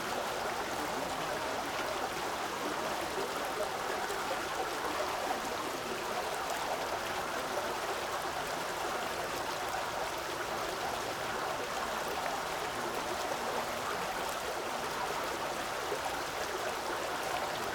Rue de l'Alzette, Esch-sur-Alzette, Luxemburg - fountain
River Alzette flows under this street which is named after it. Some maps still suggest a visible water body, but only a fountain reminds on the subterranean river.
(Sony PCM D50)